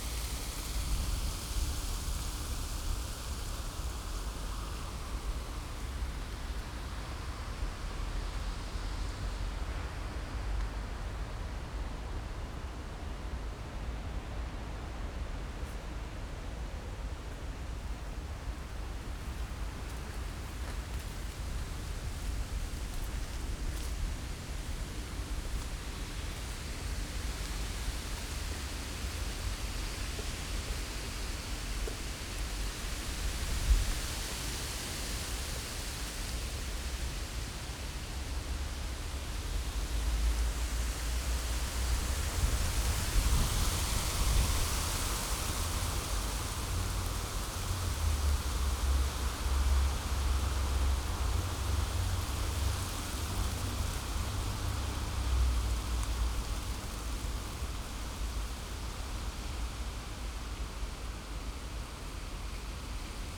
Beermannstr., Alt-Treptow, Berlin - wind in birches, night ambience
night ambience at Beermanstr., wind in birch trees.
(Sony PCM D50, DPA4060)
Berlin, Deutschland, European Union, 14 June 2013, ~02:00